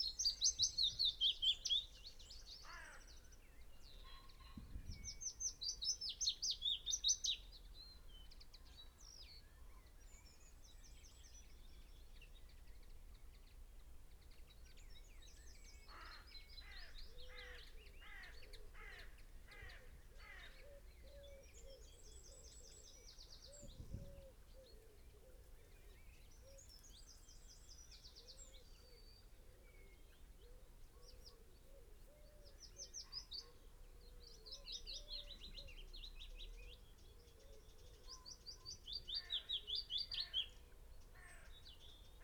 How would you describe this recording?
willow warbler ... dpa 4060s to Zoom H5 clipped to twigs ... bird call ... song ... from ... carrion crow ... wood pigeon ... wren ... robin ... buzzard ... red-legged partridge ... dunnock ... blackbird ... wood pigeon ... birds had arrived in the last 24 hours ... upto five willow warblers in constant motion ...